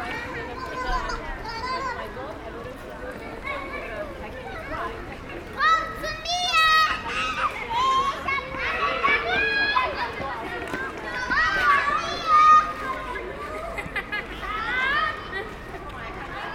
Hamburg, Deutschland - Children playing
Annenstraße & Paulinenplatz. Children playing in a park, with the parents.
Hamburg, Germany, 19 April 2019